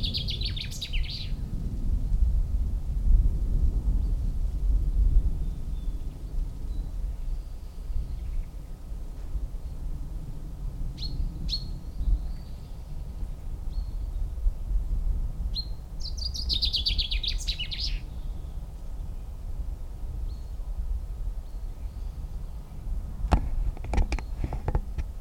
Wayland's Smithy, Ashbury, UK - 050 Birsong
Swindon, UK, 19 February 2017